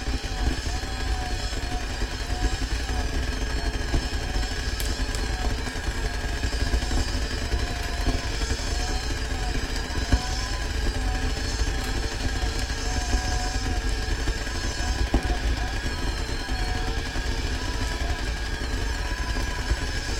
from the kitchen, teapot of the frantisek Palacky
Prague, Czech Republic, 4 January